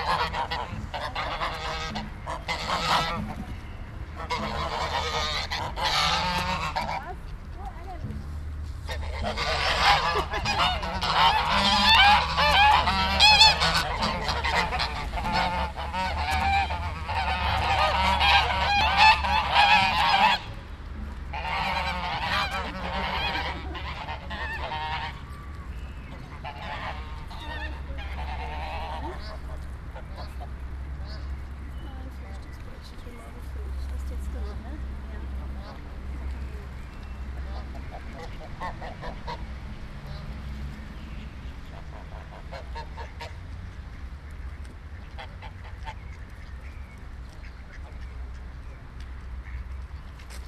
frankfurt, untermainkai, schwäne an der promenade
schwäne am nachmittag an fussgängerpromenande am main
soundmap nrw: social ambiences/ listen to the people - in & outdoor nearfield recordings